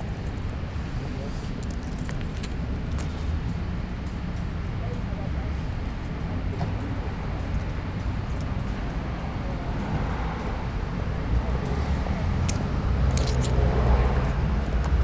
bookseller, street, munich, yellowpress, coins